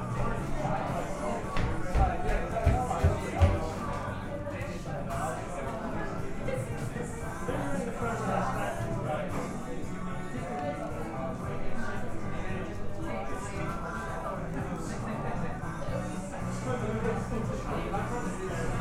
Friday afternoon, The Dorset Cafe, Brighton